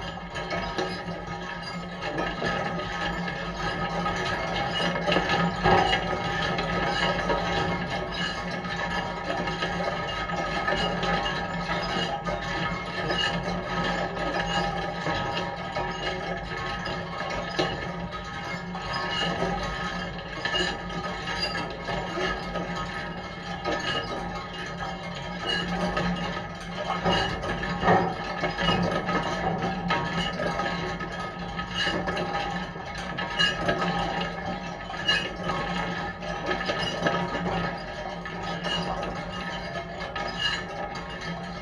Kaimynai, Lithuania, sound sculpture
metallic wind/sound sulpture not so far from the beach